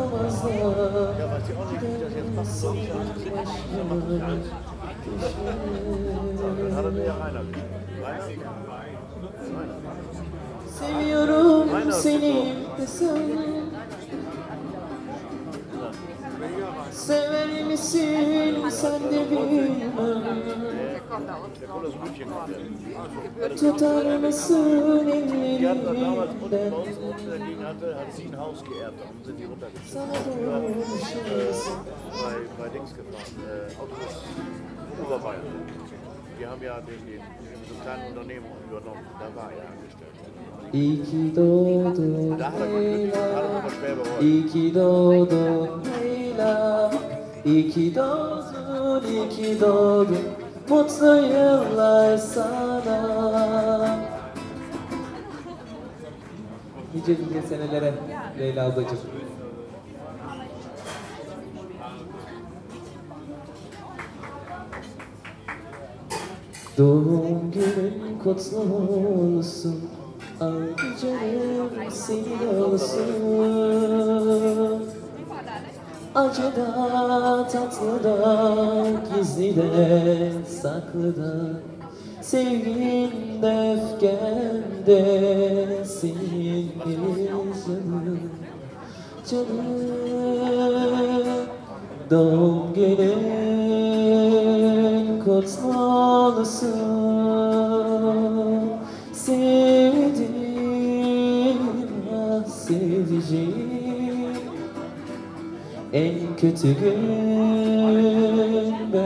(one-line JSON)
{"title": "Neukölln, Berlin, Germany - Happy Birthday and other Turkish music, Loky Garten restaurant", "date": "2012-07-29 21:30:00", "description": "9.30, a cold evening, not so many patrons, PA system with oddly inappropriate volume changes. Largish open air Turkish restaurant in an seemingly deserted factory area. I was attracted in by the singing, which reminded me of Istanbul and Turkish tea. Strange place, strange atmosphere. No baklava!", "latitude": "52.48", "longitude": "13.46", "timezone": "Europe/Berlin"}